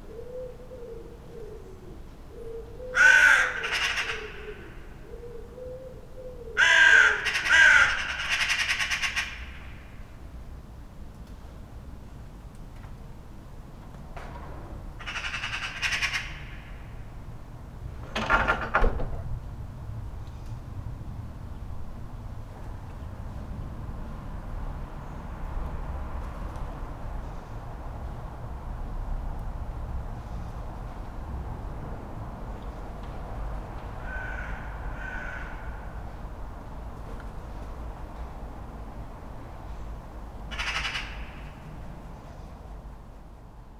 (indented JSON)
{
  "title": "Berlin Bürknerstr., backyard window - magpies and dun crows",
  "date": "2013-02-10 13:45:00",
  "description": "magpies and dun crows get excited about something\n(sony pcm d50)",
  "latitude": "52.49",
  "longitude": "13.42",
  "altitude": "45",
  "timezone": "Europe/Berlin"
}